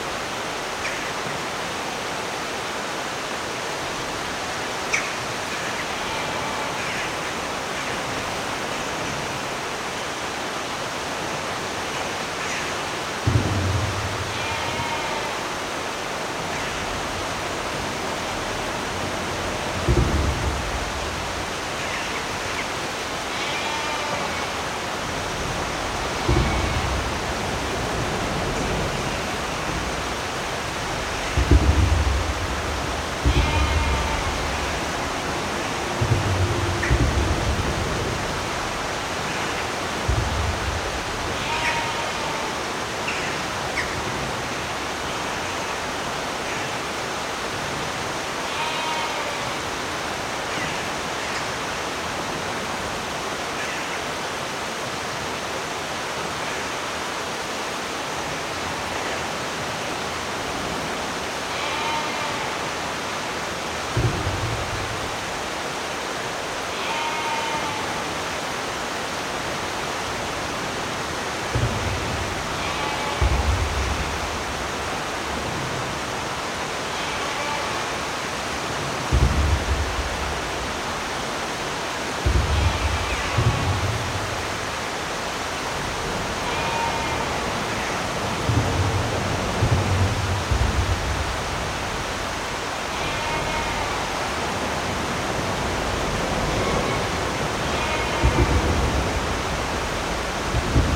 Traffic overhead on the busy M6 motorway with drips falling down, Borrow beck flowing nearby, sheep under the motorway and Jackdaws nesting above.